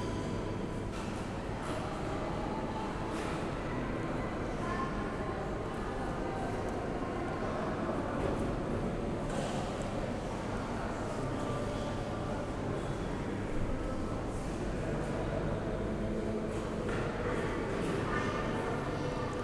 Oldenburg Hbf - main station walk
short walk through Oldenburg main station, starting at the main entrance hall
(Sony PCM D50, DPA4060)